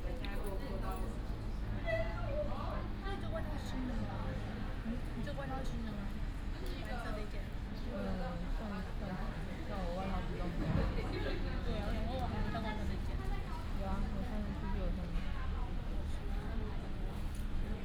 Zhuzhong Station, Zhudong Township - At the station platform
In the square of the station, The train travels, Construction sound, Traffic sound
Hsinchu County, Taiwan, 17 January, ~11:00